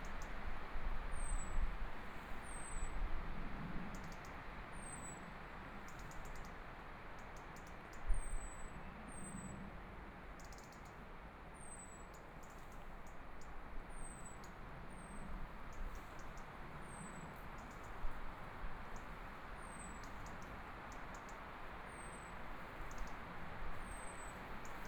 Hohenkammer, Munich Germany - In the woods
In the woods, Birdsong